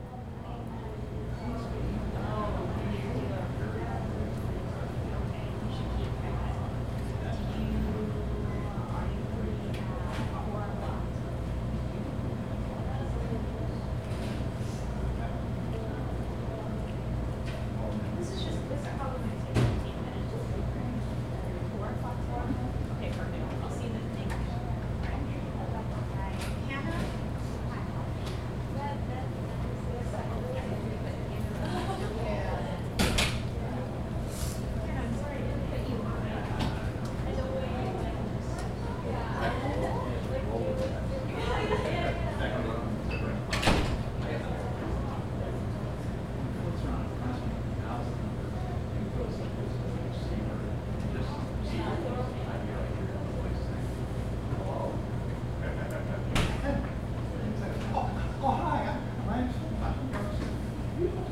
Bolton Hill, Baltimore, MD, USA - Bunting 2nd Floor Overhearing
Monday Morning 11:30.
Bunting second floor, advisory area with people talking, eating and working.
Using Tascam DR40.
Recorded in stereo mode.